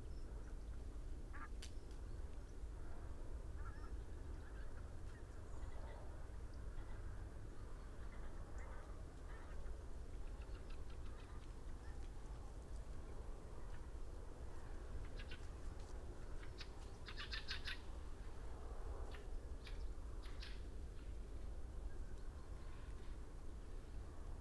Green Ln, Malton, UK - pink-footed geese ...
pink-footed geese ... parabolic ... birds flying north-west ... whiffle turn right ... lose height rapidly ... immediately return to level flight ... continue overhead and heading north-west ... wing beats can be heard ... bird calls from ... wren ... pied wagtail ... crow ... dunnock ... chaffinch ... blackbird ... pheasant ... yellowhammer ...
Yorkshire and the Humber, England, United Kingdom, 18 November 2019